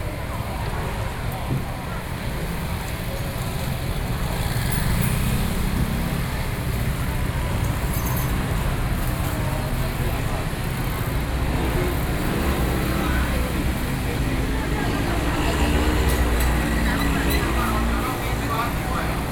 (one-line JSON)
{"title": "New Taipei City, Taiwan - SoundWalk, Traditional markets", "date": "2012-11-09 11:38:00", "latitude": "25.06", "longitude": "121.50", "altitude": "17", "timezone": "Asia/Taipei"}